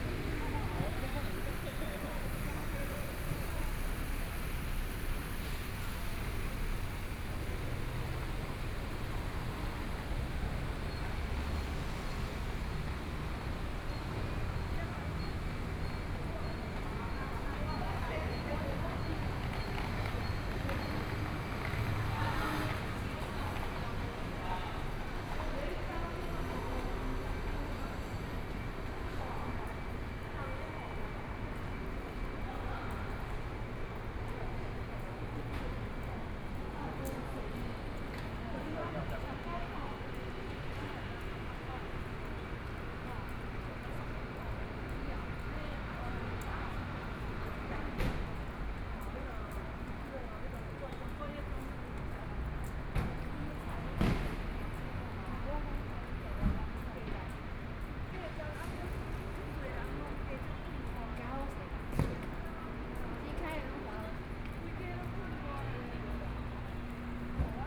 walking in the street, The crowd, Discharge, Traffic Noise, Binaural recordings, Sony PCM D50 + Soundman OKM II
Zhongshan District, Taipei City, Taiwan